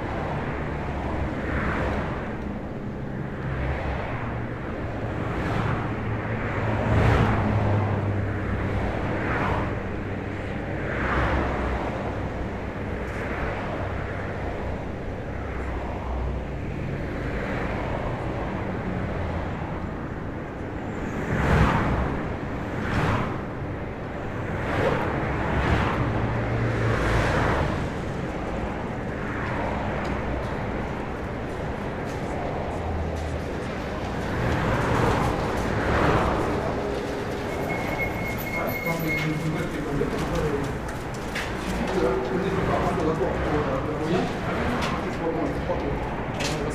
Toulouse, France - crossing under the highway
in the centre of the pedestrian crossing under the highway
Captation : ZOOMH6
France métropolitaine, France, 30 January 2022